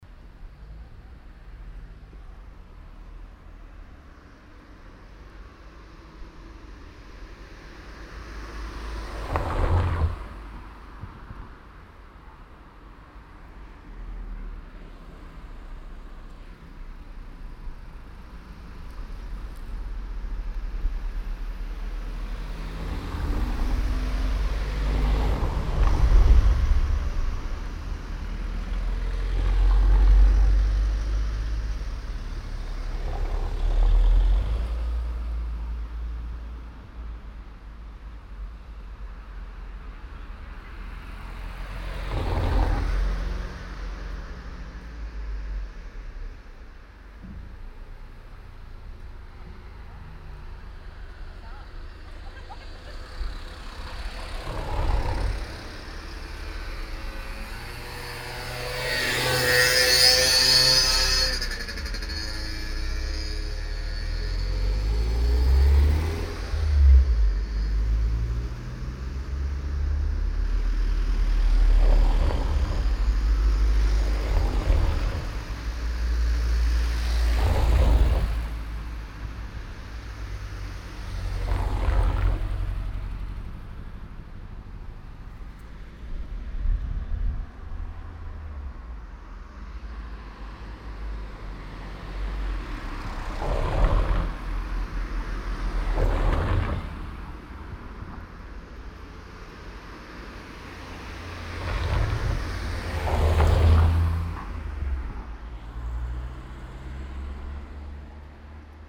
{
  "title": "audresseles, rue gustave danquin, fussgängerüberweg",
  "description": "fussgängerüberweg an enger zweispuriger strasse, mittags\nfieldrecordings international:\nsocial ambiences, topographic fieldrecordings",
  "latitude": "50.82",
  "longitude": "1.59",
  "altitude": "12",
  "timezone": "GMT+1"
}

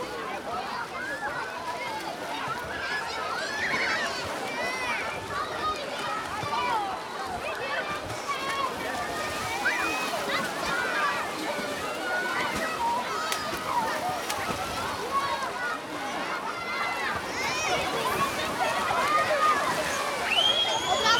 {
  "title": "Poznan, at Rusalka Lake - activity at the pier",
  "date": "2014-07-06 12:30:00",
  "description": "beach and pier swarmed with sunbathers on a hot Sunday noon. children immensely enjoying the time at the lake jumping into it, swimming, splashing.",
  "latitude": "52.43",
  "longitude": "16.88",
  "altitude": "70",
  "timezone": "Europe/Warsaw"
}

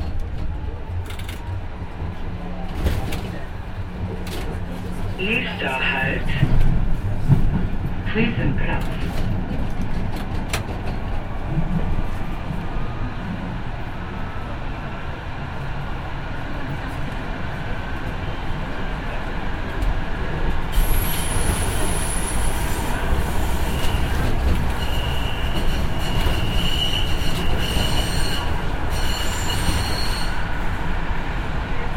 {
  "title": "Köln, Friesenplatz - U5 Friesenplatz Koeln - fahrt der linie 5",
  "latitude": "50.94",
  "longitude": "6.94",
  "altitude": "56",
  "timezone": "GMT+1"
}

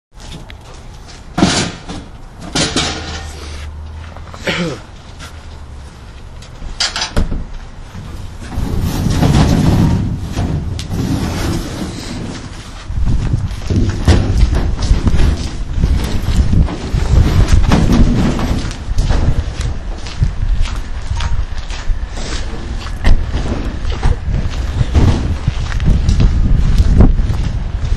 {
  "title": "armor square, syracuse, man moving file cabinet",
  "date": "2011-01-31 17:00:00",
  "description": "street activity, syracuse, tdms11green",
  "latitude": "43.05",
  "longitude": "-76.16",
  "altitude": "117",
  "timezone": "America/New_York"
}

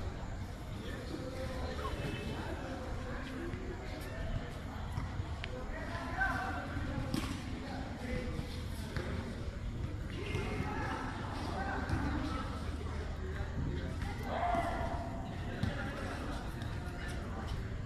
{
  "title": "Cl. 8 #44-2 a, Villavicencio, Meta, Colombia - Parque de la cuarta etapa de la esperanza.",
  "date": "2017-11-14 16:33:00",
  "description": "ambiente sonoro en el parque de la cuarta etapa de la esperanza en el que se realizan diariamente actividades recreo deportivas.",
  "latitude": "4.13",
  "longitude": "-73.64",
  "altitude": "452",
  "timezone": "America/Bogota"
}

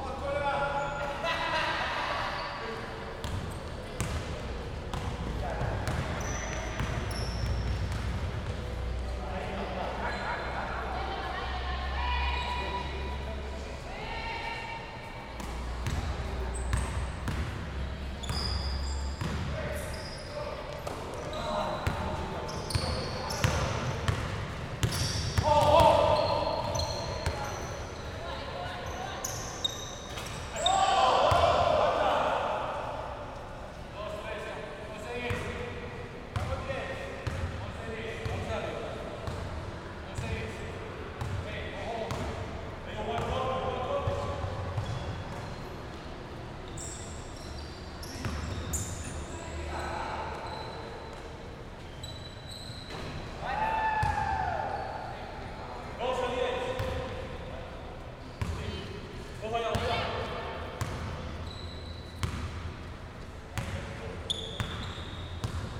{
  "title": "KR 87 # 48 BB - 30, Medellín, Antioquia, Colombia - Coliseo Universidad de Medellín",
  "date": "2021-09-24 12:30:00",
  "description": "Partido de basquetbol en el coliseo, sin público representativo en un día soleado.\nSonido tónico: Voces, pelota rebotando y zapatos.\nSeñal sonora: Gritos, aplausos.\nSe grabó con una zoom H6, son micrófono MS.\nTatiana Flórez Ríos - Tatiana Martínez Ospino - Vanessa Zapata Zapata",
  "latitude": "6.23",
  "longitude": "-75.61",
  "altitude": "1563",
  "timezone": "America/Bogota"
}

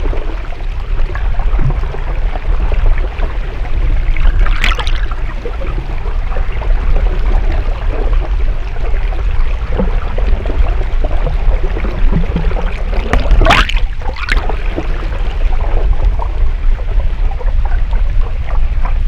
{
  "title": "Valparaíso, Chile - Close to the Navy ships in Valparaíso coast",
  "date": "2013-12-01 12:00:00",
  "latitude": "-33.03",
  "longitude": "-71.62",
  "altitude": "1",
  "timezone": "America/Santiago"
}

